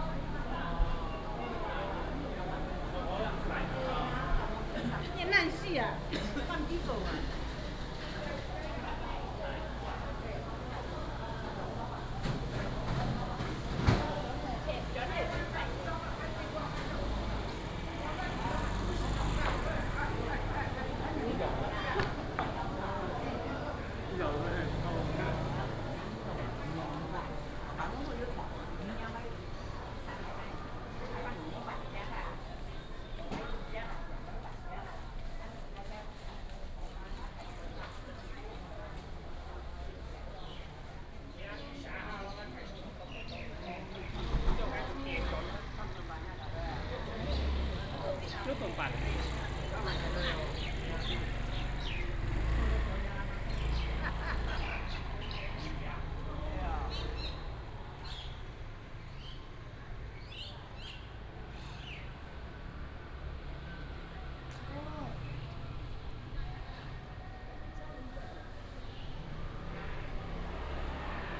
北埔公有零售市場, Beipu Township - Traditional market
Traditional market, Traffic sound, Morning in the area of the market, bird sound, Binaural recordings, Sony PCM D100+ Soundman OKM II